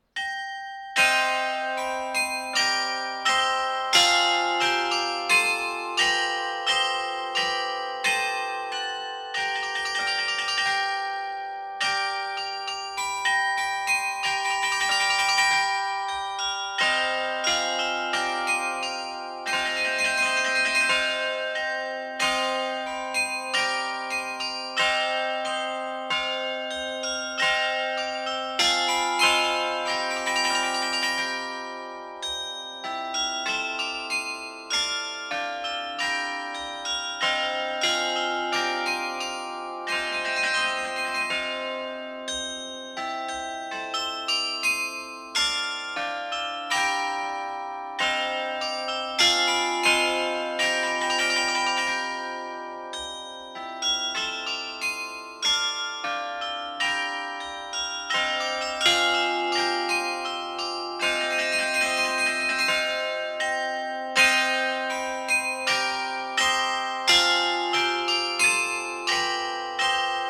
Pl. du Marché aux Chevaux, Bourbourg, France - Bourbourg - Carillon de l'église
Bourbourg (Département du Nord)
carillon de l'église St-Jean-Baptiste
Maître carillonneur : Monsieur Jacques Martel
France métropolitaine, France, 16 June 2020, 10:00